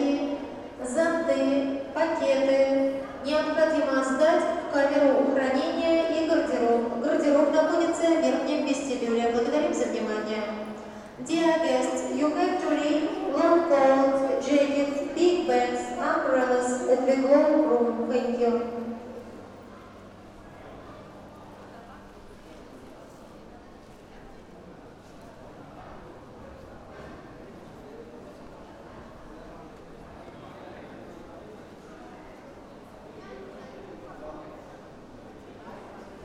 St Petersburg, Russia, Hermitage - The State Hermitage Museum
Waiting for entrance ticket
August 2011